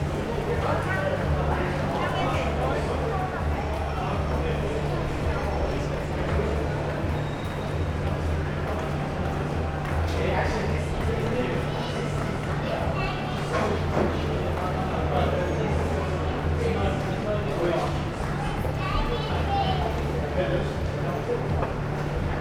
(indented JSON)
{
  "title": "neoscenes: tunnel under George Street",
  "date": "2009-11-27 05:35:00",
  "latitude": "-33.88",
  "longitude": "151.20",
  "altitude": "25",
  "timezone": "Australia/NSW"
}